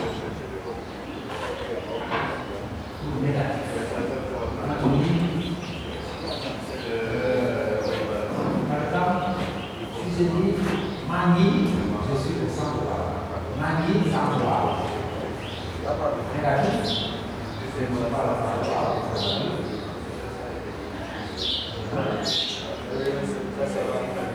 Saint Louis, Senegal - Wolof Language Class
Ambient recording of a Wolof language class at Waaw Centre for Art and Design. Recorded on a Zoom H4 recorder.